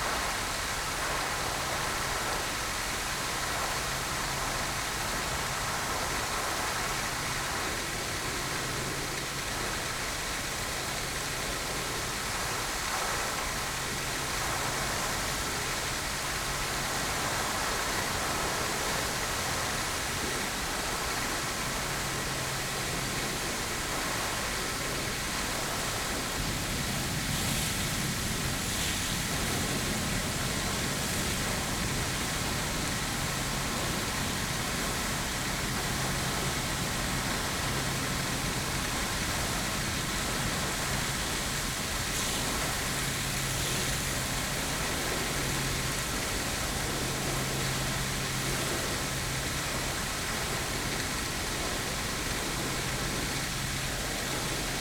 Chatsworth, UK - Emperor Fountain ...
Emperor Fountain ... Chatsworth House ... gravity fed fountain ... the column moves in even the slightest breeze so the plume falls on rocks at the base ... or open water ... or both ... lavalier mics clipped to sandwich box ... voices ... background noises ...